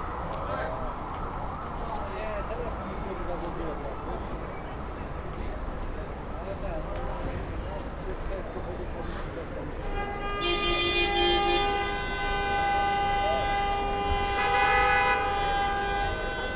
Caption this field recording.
Brussels, Parvis de Saint-Gilles. Crowd, ambulance, and a homeless man asking me what I'm doing.